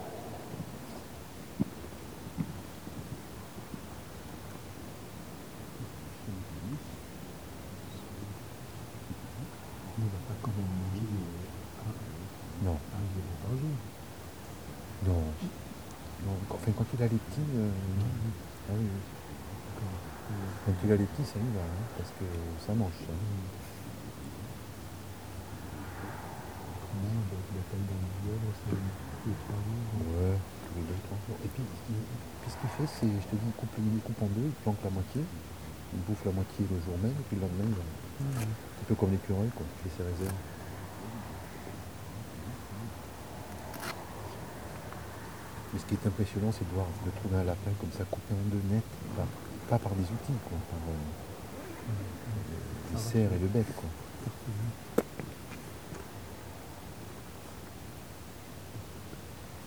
France, Calce, waiting for the Eagle Owl - Waiting for the Eagle Owl
With a group of bird watchers at nightfall, waiting for the eagle owl to hoot.